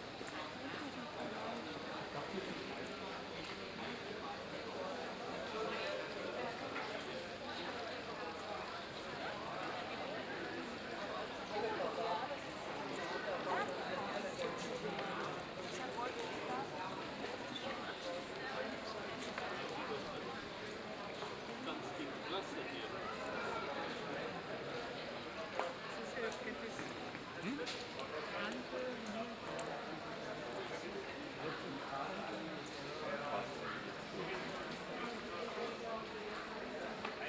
Hühnermarkt, Frankfurt am Main, Deutschland - 14th of August 2018 Teil 3
Third part of the walk through the newly built and contested area of the 'old town' in Frankfurt. A guide is talking about reconstruction as a technique or rebuilding an area - the churchbells are tolling, people talking about old and new, about the underground, some construction workers are discussing about doors and if they are open or closed. All recordings are binaural.